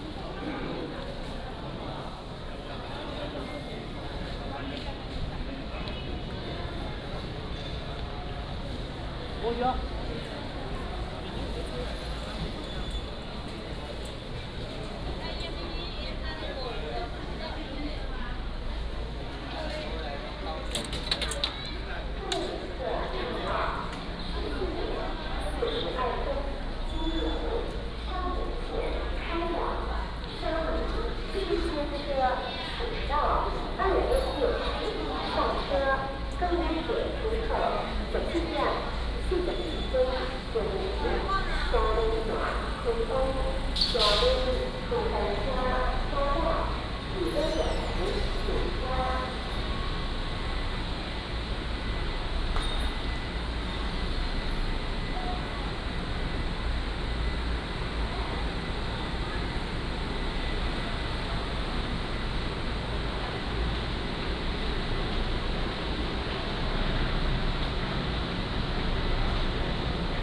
Tainan Station, East Dist., Tainan City - In the station hall
In the station hall, Traffic sound, Station Message Broadcast